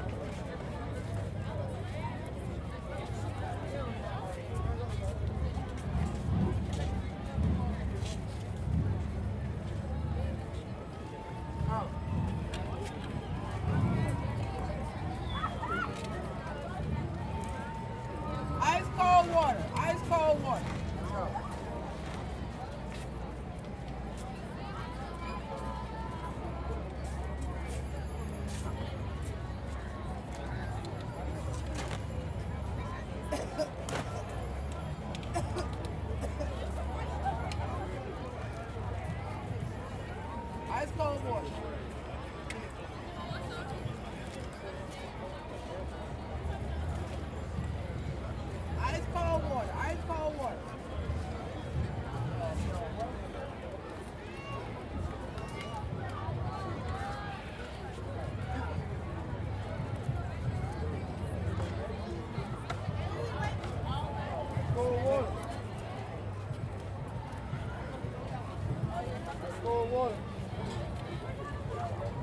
LA - martin luther king memorial parade at crenshaw / martin luther king jr, spectators and water sellers
CA, USA, 20 January, 13:30